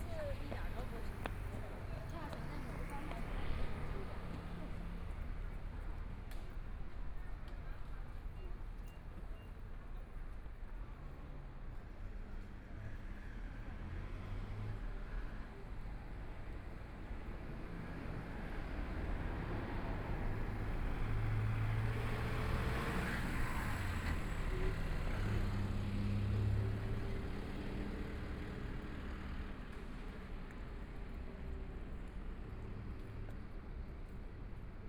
Walking across the different streets, From the pedestrian street with tourists, Traffic Sound, Motorcycle sound
Binaural recordings, ( Proposal to turn up the volume )
Zoom H4n+ Soundman OKM II